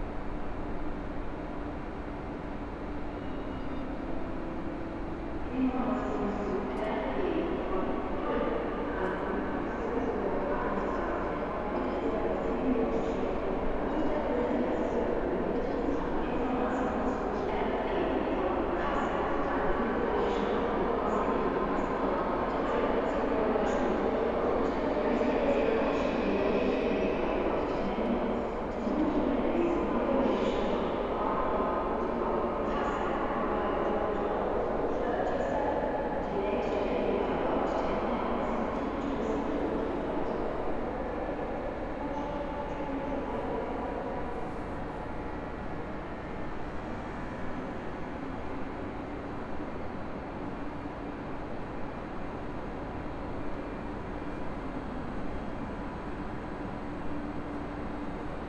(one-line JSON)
{"title": "Frankfurt (Main) Hauptbahnhof, Gleis - 27. März 2020 Gleis 18", "date": "2020-03-27 12:27:00", "description": "Actually the recorded platform also last week was 18. While on the 20th of march an anouncement is to be heard that the train to Bruessels does not leave, there is nothing today. The train is still in the schedule, but it is not anounced anymore. Just silence. What is to be heard are the anouncements for regional trains, in this case to Wächtersbach. The microphone walks through a tunnel to a different platform (11).", "latitude": "50.11", "longitude": "8.66", "altitude": "109", "timezone": "Europe/Berlin"}